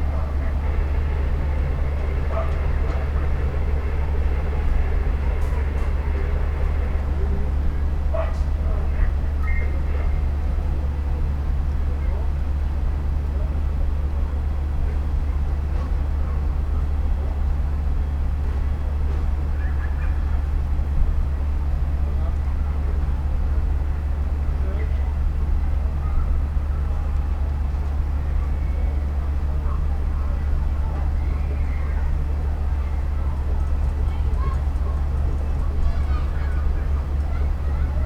Mateckiego street, Piatkowo district, Poznan - firetruck
after a heavy storm a lot of water gathered behind the building so a fire brigade has been called to pump out all the water. hum of the fire truck's engine, blips of fireman shortwave transmiter and bitcrushed conversation over the radio. dogs barking with fantastic reverb over the nearby big apartment buildings. kids playing in the water, running around in their wellingtons (roland r-07)